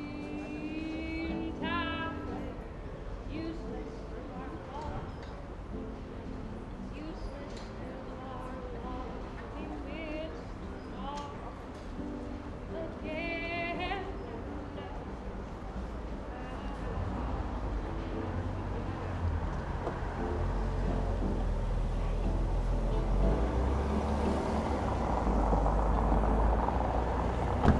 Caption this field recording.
no longer a turkish restaurant, since the owner changed recently. in front of the cafe, listening to the saturday afternoon ambience, a singer, visitors of the new weekend market, neigbours, cars. a bright autumn day.